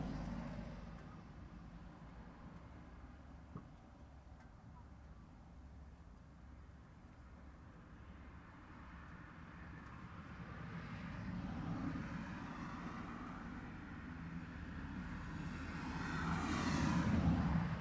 Filling station, Berwick-upon-Tweed, UK - A1 road noise by Lindisfarne filling station, Northumberland
Recorded on hand-held Tascam DR-05 from lay-by next to A1 road, sat in car with door open. Includes a few incident sounds from handling of the recording device.
United Kingdom, 5 February, ~14:00